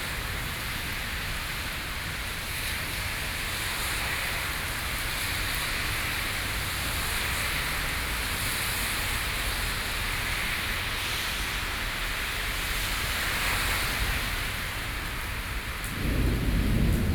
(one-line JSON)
{"title": "tamtamART.Taipei - Thunderstorm", "date": "2013-06-23 16:18:00", "description": "Thunderstorm, Standing in the doorway, Sony PCM D50 + Soundman OKM II", "latitude": "25.05", "longitude": "121.52", "altitude": "24", "timezone": "Asia/Taipei"}